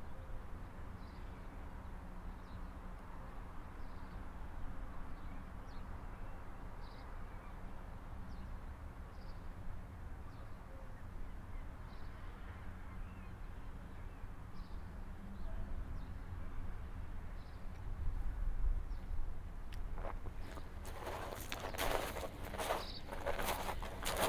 Marine Parade, Folkestone, Regno Unito - GG Folkestone beach1-AKDolven Bell-190524-h15-10
Folkestone, UK, 24 May 2019, ~15:00